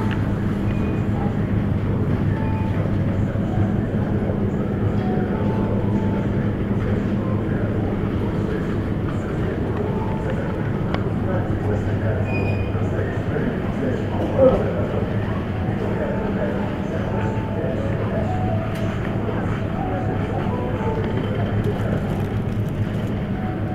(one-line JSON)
{"title": "Centrum Handlowe Turzyn, Szczecin, Poland", "date": "2010-09-29 12:38:00", "description": "Ambiance inside supermarket.", "latitude": "53.43", "longitude": "14.53", "altitude": "17", "timezone": "Europe/Warsaw"}